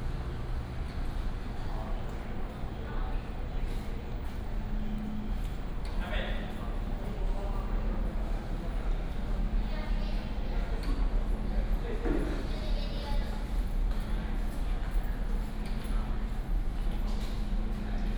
新竹轉運站, Hsinchu City - At the bus transfer station
At the bus transfer station, Traffic sound